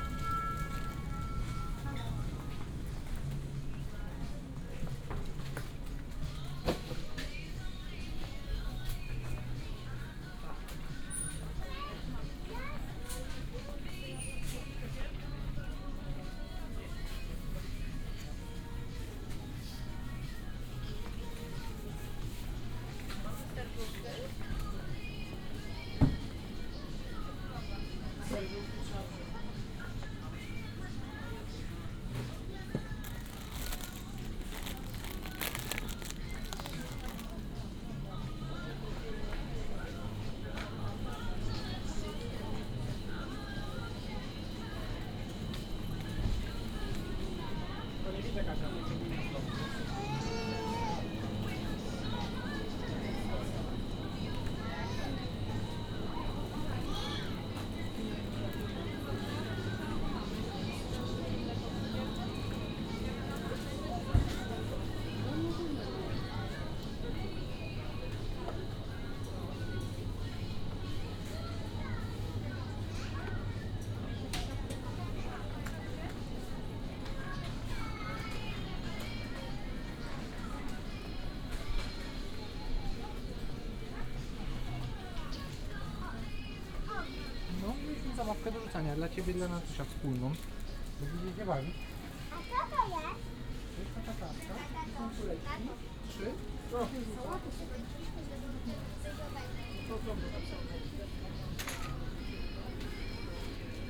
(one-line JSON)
{"title": "Lidl store, Szymanowskiego, Poznan - shopping", "date": "2018-09-01 18:00:00", "description": "(binaural rec, please use headphones) shopping at lidl store. entire visit at the store from the entrance to the cash registers (roland r-07 + luhd pm-01 bins)", "latitude": "52.46", "longitude": "16.91", "altitude": "100", "timezone": "GMT+1"}